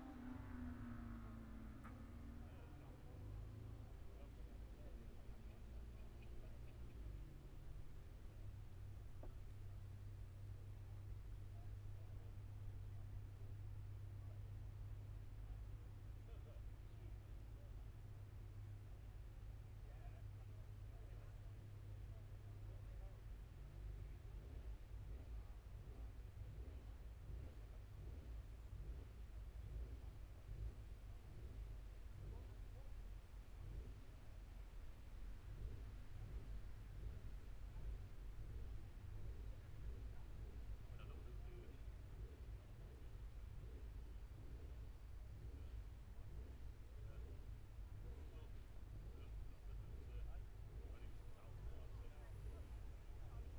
Scarborough District, UK - Motorcycle Road Racing 2016 ... Gold Cup ...
600cc evens practice ... Mere Hairpin ... Oliver's Mount ... Scarborough ... open lavalier mics clipped to baseball cap ... pseudo binaural ... sort of ...
24 September